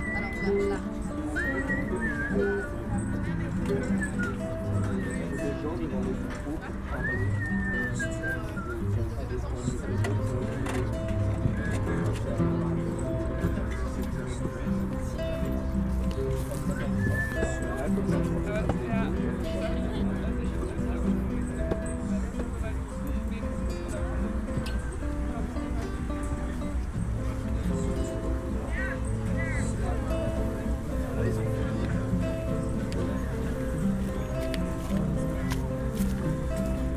field near Manheim, Germany - Klimacamp activities, ambience
a week of protests and activities take place here. Tuesday evening, camp ambience.
(Sony PCM D50, DPA4060)